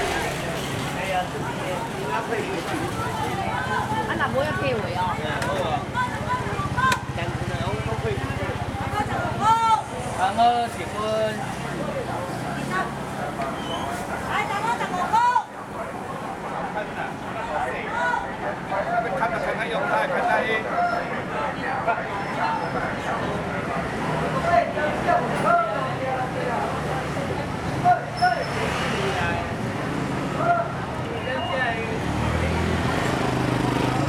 Sanzhong District, New Taipei City, Taiwan - Walking through the traditional market
Walking through the traditional market
Sony Hi-MD MZ-RH1 +Sony ECM-MS907